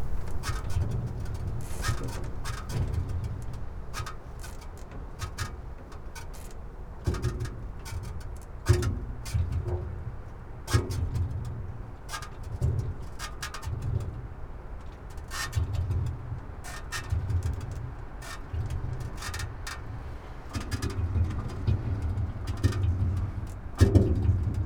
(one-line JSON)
{"title": "quarry, Marušići, Croatia - void voices - stony chambers of exploitation - crane cabine", "date": "2015-04-05 13:40:00", "description": "spring, wind bora", "latitude": "45.41", "longitude": "13.74", "altitude": "267", "timezone": "Europe/Zagreb"}